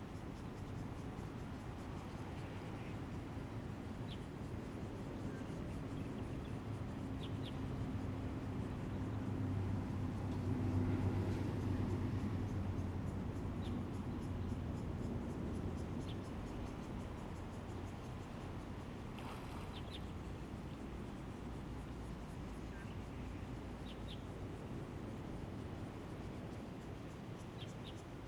birds, Sound of the waves, The weather is very hot
Zoom H2n MS +XY